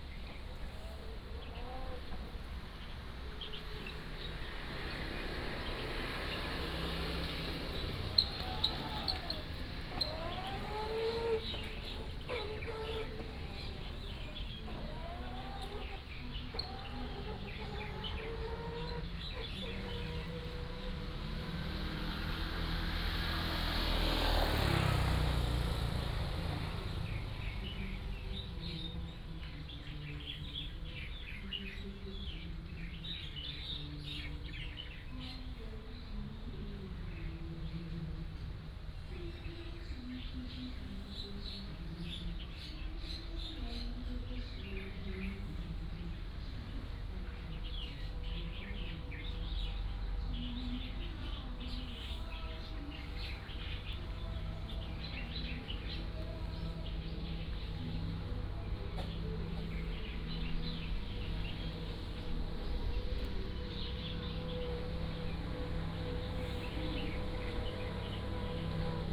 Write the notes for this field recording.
Waterfront Park, Birds singing, Traffic Sound, A distant ship whistle